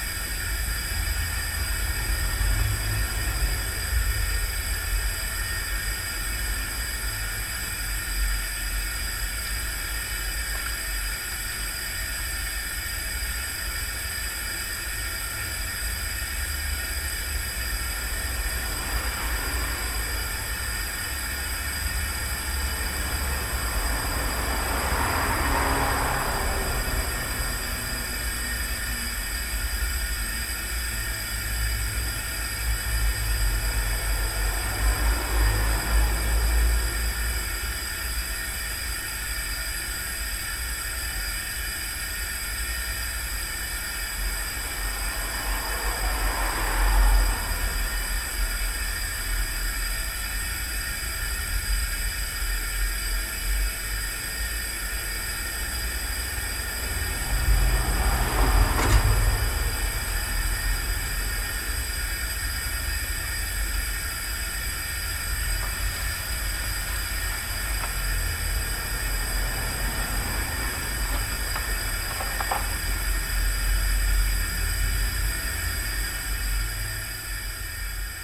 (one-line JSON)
{"title": "Sandėlių g., Kaunas, Lithuania - Large gas box", "date": "2021-04-22 15:26:00", "description": "Combined stereo field and dual contact microphone recording of a big industrial gas pipe box. Steady hum of gas + cars driving nearby. Recorded with ZOOM H5.", "latitude": "54.87", "longitude": "23.94", "altitude": "33", "timezone": "Europe/Vilnius"}